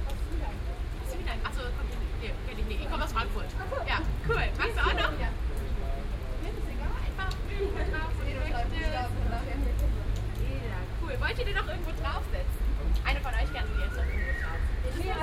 Binaural recording of the square. Second of several recordings to describe the square acoustically. Here is a dialogue between some people audible, someone tries to sell something.
Koblenz, Germany, 19 May 2017